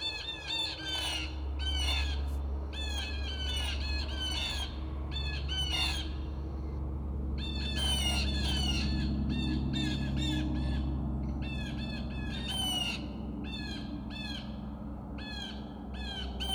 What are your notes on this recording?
Recorded bird calls to scare real birds away from the vines. Nova Scotian wine has a fast growing reputation and sound is used, alongside nets, to protect the grapes from hungry beaks. The sequences of predator and distress calls are played automatically every 10 minutes or so. The sound quality is truly poor. I'm surprised that any real birds are fooled.